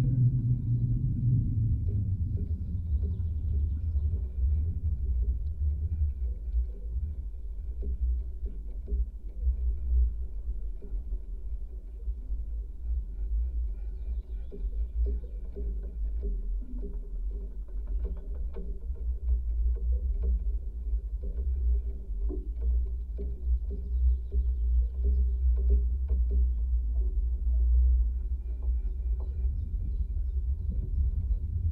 Lake Bebrusai, Lithuania, abandoned pontoon
Stalking through empty resort I found rusty, half broken pontoon bridge. contact microphones and geophone on metallic parts